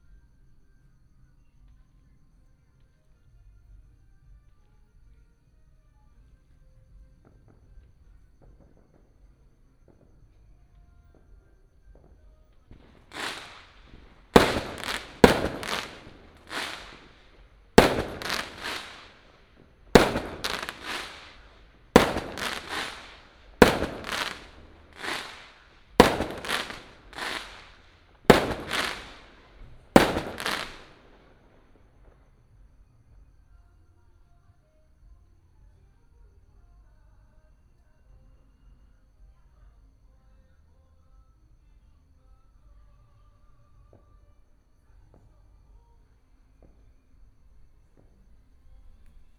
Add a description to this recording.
Small village, Firecrackers and fireworks, lunar New Year, Binaural recordings, Sony PCM D100+ Soundman OKM II